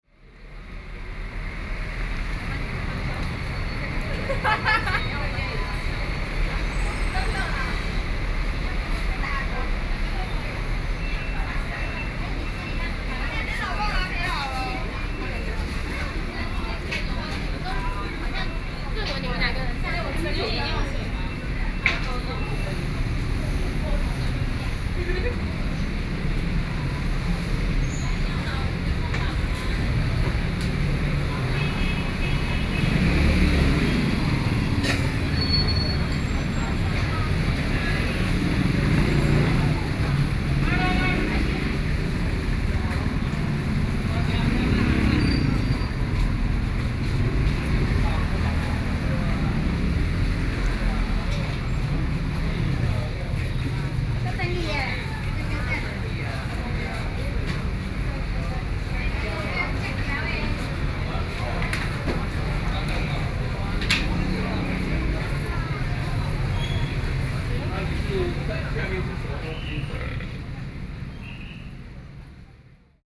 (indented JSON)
{
  "title": "Chéngdū Rd, Wanhua District, Taipei City - soundwalk",
  "date": "2012-11-15 14:00:00",
  "description": "Soundwalk, Binaural recordings, Sony PCM D50 + Soundman OKM II, ( Sound and Taiwan - Taiwan SoundMap project / SoundMap20121115-19 )",
  "latitude": "25.04",
  "longitude": "121.51",
  "altitude": "16",
  "timezone": "Asia/Taipei"
}